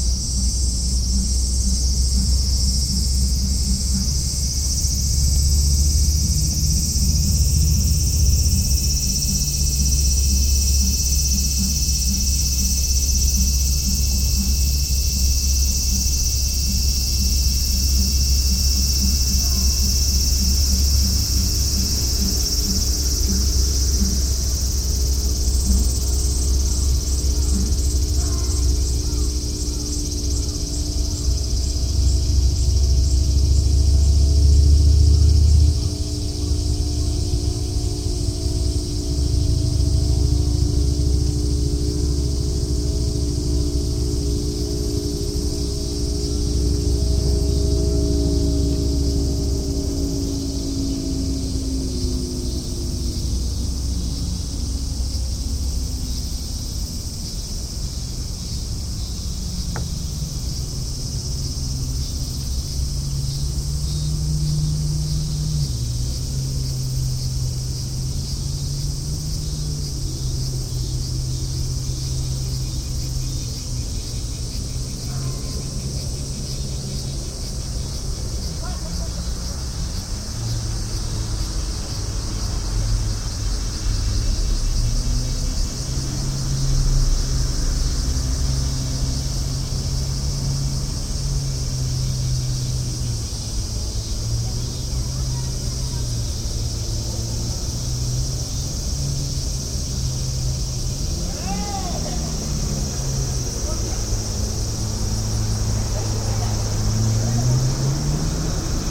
{"title": "Chomeijicho, Omihachiman, Shiga Prefecture, Japan - Along Biwako near Chomeiji", "date": "2014-08-13 10:21:00", "description": "Boats, personal watercraft, cicadas, and sounds from a nearby small temple a few hundred meters west of Chomeiji Port. Recorded on August 13, 2014 with a Sony M10 recorder, builtin mics facing Lake Biwa.", "latitude": "35.16", "longitude": "136.06", "altitude": "84", "timezone": "Asia/Tokyo"}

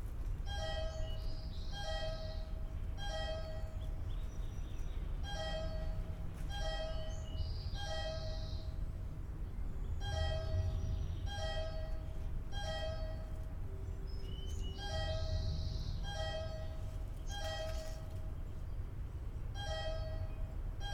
{"title": "Mysterious bunker signal tone with passing mice, Headlands CA", "description": "I went to record a mysterious sound in a locked bunker and found some mice live inside", "latitude": "37.82", "longitude": "-122.53", "altitude": "73", "timezone": "Europe/Tallinn"}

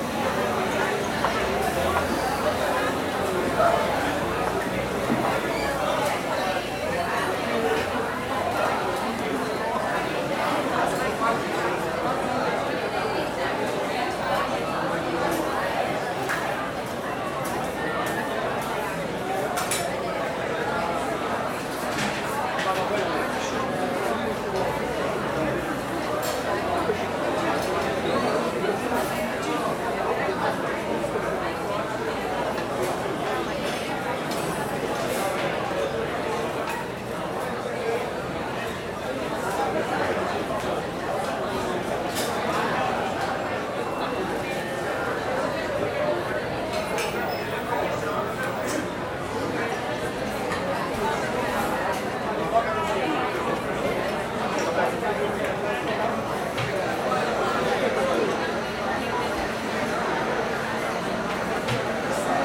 {"title": "Restaurant Bella Paulista - Restaurant Atmosphere (crowded)", "date": "2018-03-17 00:30:00", "description": "Inside a crowded restaurant in Sao Paulo (Brazil) around midnight. People talking, some noise of the machines in background.\nRecorded in Bella Paulista, on 16th of March.\nRecording by a MS Schoeps CCM41+CCM8 setup on a Cinela Suspension+windscreen.\nRecorded on a Sound Devices 633\nSound Ref: MS BR-180316T07", "latitude": "-23.56", "longitude": "-46.66", "altitude": "834", "timezone": "America/Sao_Paulo"}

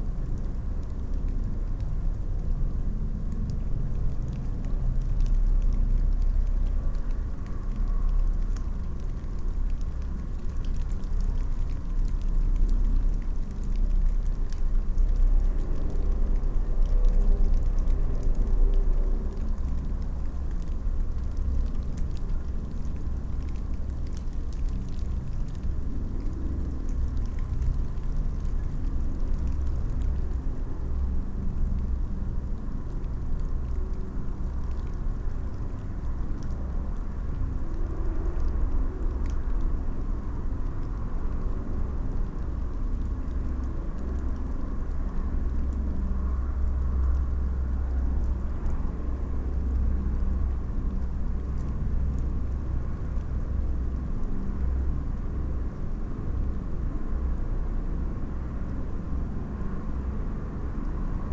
{"title": "Narkūnai, Lithuania, inside the electric pole", "date": "2019-11-29 11:20:00", "description": "abandoned railway. there was electric power line at it. now some concrete electric poles lay down fallen in the grass. I placed small mics in one of such pole. drizzle rain, distant traffic.", "latitude": "55.47", "longitude": "25.56", "altitude": "125", "timezone": "Europe/Vilnius"}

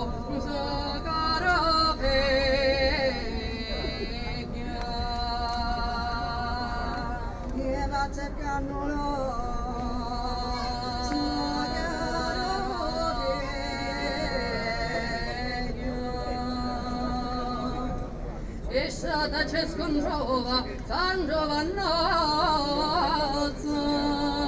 {"title": "piazza Teatro Massimo Palermo (romansound) 6/2/10 h 19,15", "description": "Matilde Politti Simona di Gregorio anctichi canti femminili siciliani (EDIROL R-09hr)", "latitude": "38.12", "longitude": "13.36", "altitude": "32", "timezone": "Europe/Berlin"}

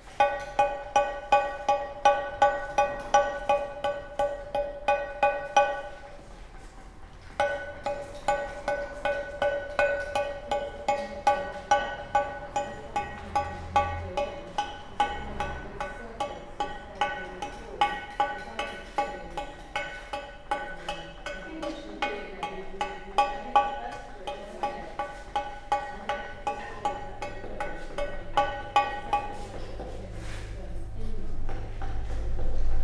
{"title": "Project space, Drill Hall, Portland, Dorset, UK - Weekend stone carving workshop - retracing Joe's footsteps around Portland", "date": "2015-07-24 16:12:00", "description": "I traveled to Drill Hall Gallery specifically because this was a place where Joe Stevens created a rainy day recording; when I got there, Hannah Sofaer was running a Portland stone carving workshop. Portland stone is beautifully musical and particular. In this recording one of the workshop participants is carving out a pregnant lady shape inspired somewhat by the late artist Giacometti. Giacometti never depicted pregnancy so this aspect is a departure but in other elements it is similar to his ladies... the Portland stone is very hard and must be chipped away in tiny increments as you can hopefully hear in the recording. You can also hear the busy road right by the workshop space. The workshop is amazing - Drill Hall Project Space - a large structure adjacent to the impressive Drill Hall Gallery space, full of comrades chipping away at huge blocks of stone with selected chisels. I spoke mostly to Hannah in between recording the amazing sounds of the sonic stone.", "latitude": "50.55", "longitude": "-2.44", "altitude": "98", "timezone": "Europe/London"}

